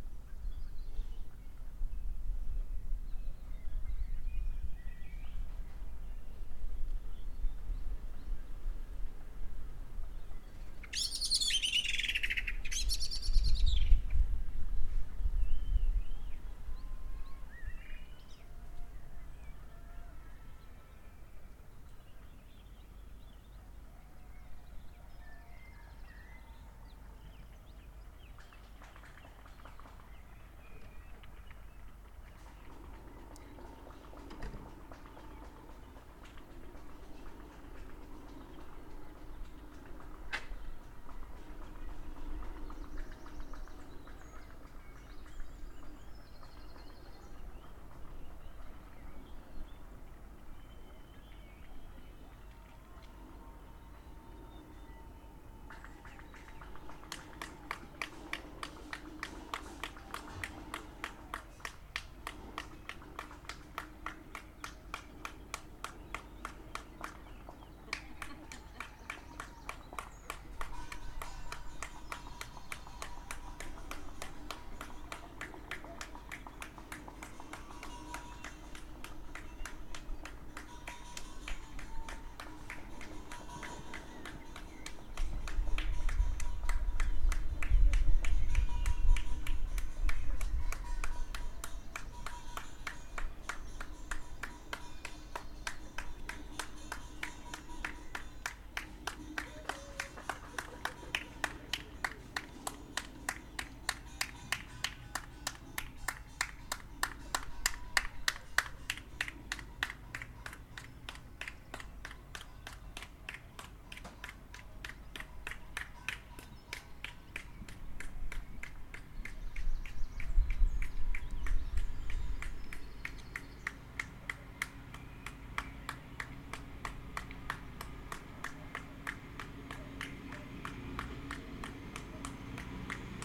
Chestnut Cottage - God bless our front line workers
Even out in the styx the birds, the tractors, the distant villagers and our neighbours say thank you to our front line workers
England, United Kingdom, April 2020